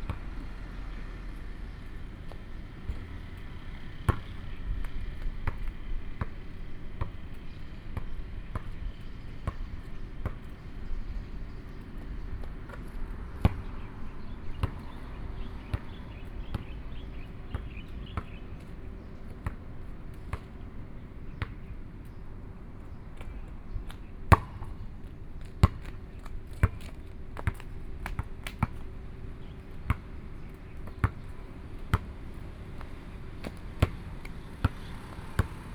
富世村, Sioulin Township - Playing basketball
Birdsong, Playing basketball, The weather is very hot, Traffic Sound, Aboriginal tribes
Binaural recordings
Hualien County, Taiwan, 27 August 2014